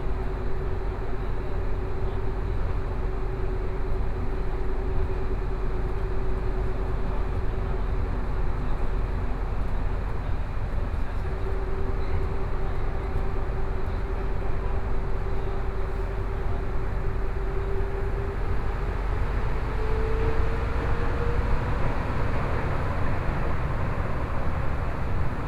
from Jiannan Road Station to Dazhi Station, Binaural recordings, Zoom H4n+ Soundman OKM II